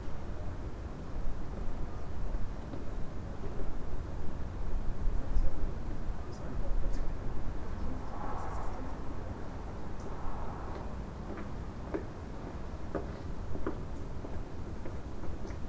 berlin: friedelstraße - the city, the country & me: night traffic
cyclists, passers by and the traffic sound of kottbusser damm in the distance
the city, the country & me: january 12, 2012